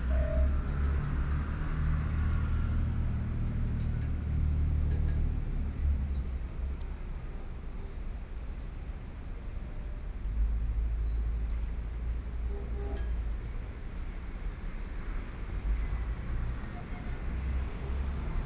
The river yacht, Traffic Sound
Sony PCM D50+ Soundman OKM II
21 May 2014, ~19:00